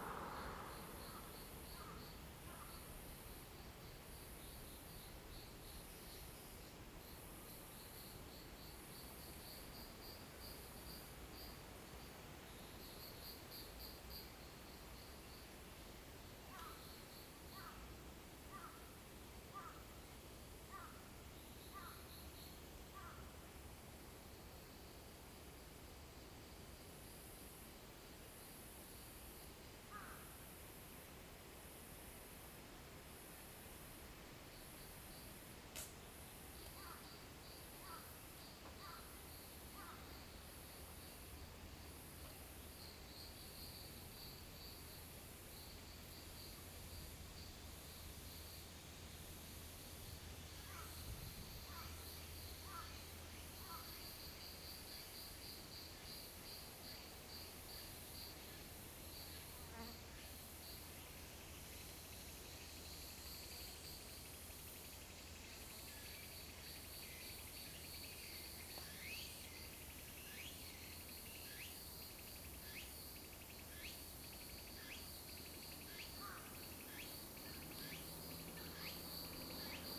Gaoraoping, Fuxing Dist., Taoyuan City - Mountain road
Mountain road, The sound of birds, Traffic sound, Zoom H2n MS+XY